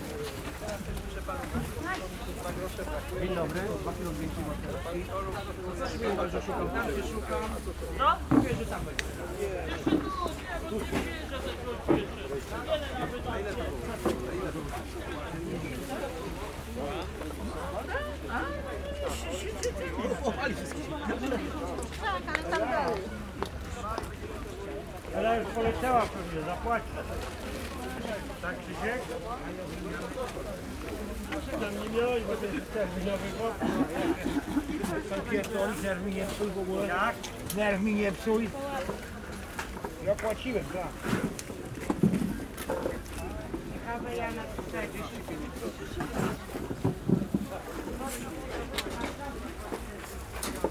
Old open market, Bałuty, Łódź, Poland
binaural walk-through of the old open market in Baluty. Made during a sound workshop organized by the Museum Sztuki of Lodz.